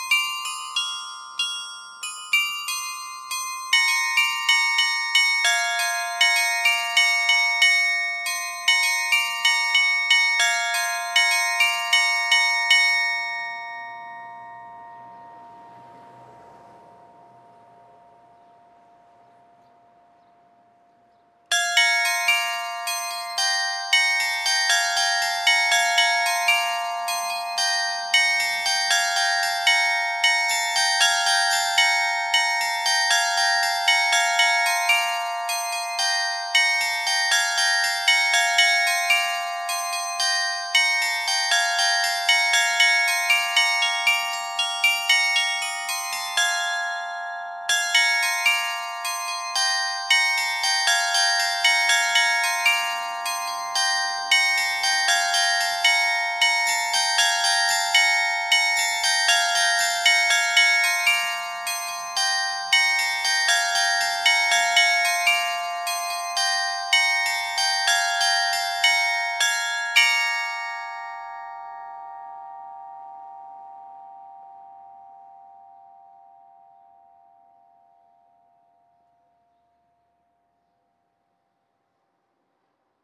Pl. de la Mairie, Aix-Noulette, France - carillon de Aix Noulettes
Aix Noulettes (Pas-de-Calais)
Carillon - place de la mairie
Suite de ritournelles automatisées programmées depuis la mairie